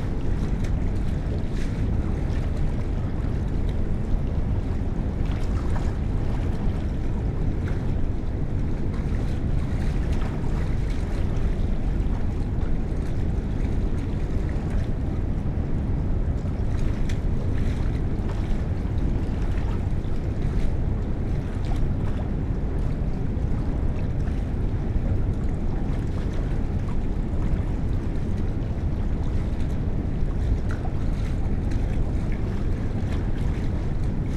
Kupiskis, Lithuania, at the dam